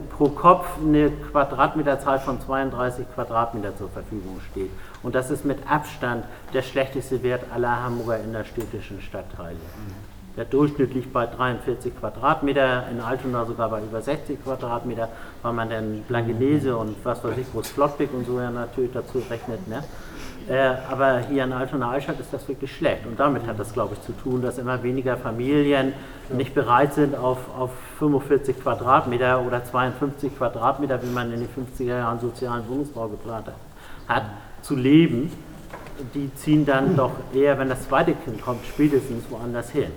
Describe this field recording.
Erdgeschoss Frappant, Große Bergstraße, Hamburg